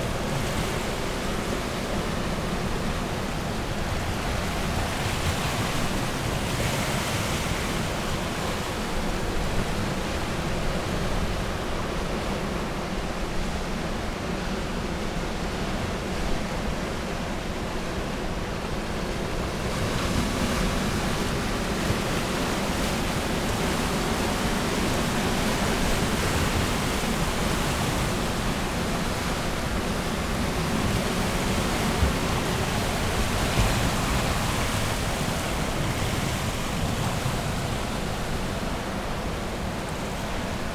East Lighthouse, Battery Parade, Whitby, UK - east pier ... outgoing tide ...
east pier ... out going tide ... lavalier mics clipped to T bar on fishing landing net pole ... placed over edge of pier ... calls from herring gulls ...
8 March 2019, ~10:00